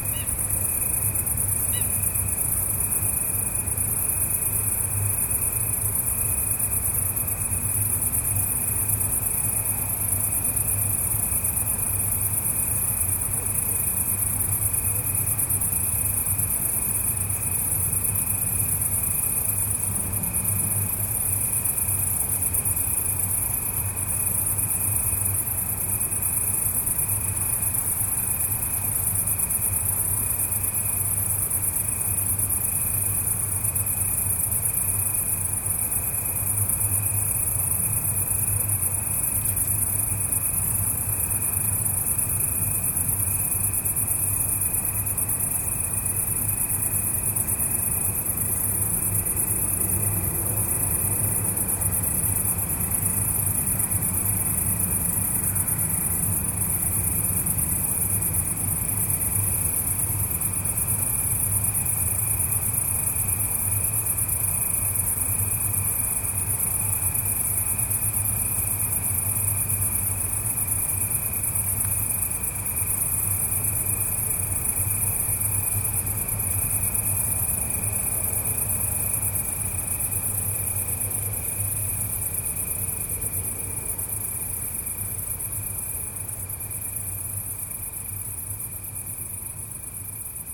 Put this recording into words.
night soundscape from the Island in Libeň, September, one of the last warm days